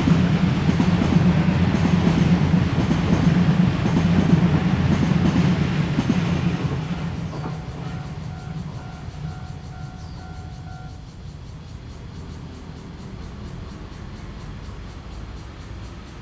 Zhonghua Rd., Hualien City - under the trees
under the trees, Traffic Sound, Cicadas sound, Fighter flying through, Train traveling through
Zoom H2n MS+XY
Hualien County, Taiwan, 29 August